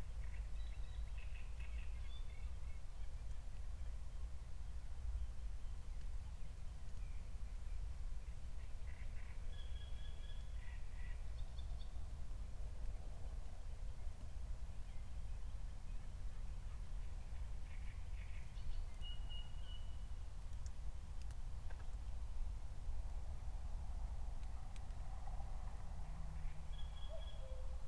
Berlin, Buch, Mittelbruch / Torfstich - wetland, nature reserve
22:00 Berlin, Buch, Mittelbruch / Torfstich 1
Deutschland, 18 June 2020, 22:00